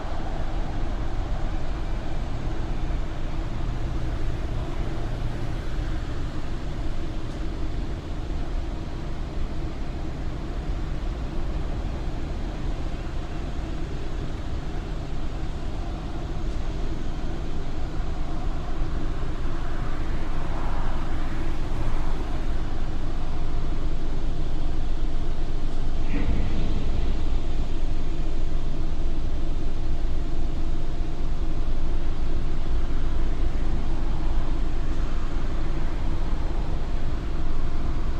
Cockerill-Sambre, blast furnace, soccer match
Cockerill-Sambre blast furnace plant and the noise of the crowd at a soccer match on the opposite bank of the river at Standard de Liège. Zoom H2.
Seraing, Belgium, 14 November 2008